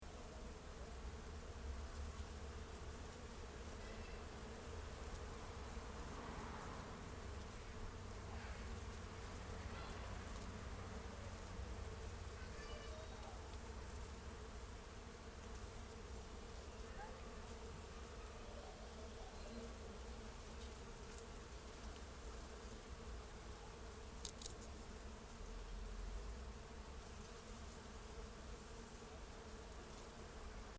Isny im Allgäu: Hunderte von Bienen im Efeu einer Garagenbegrünung
13. August 2009, 17:25: Hunderte von Bienen hatten sich im Efeu einer Garagenbegrünung versammelt und summten um die Wette. Keine Ahnung, was die da suchten ...
Deutschland, 13 August 2009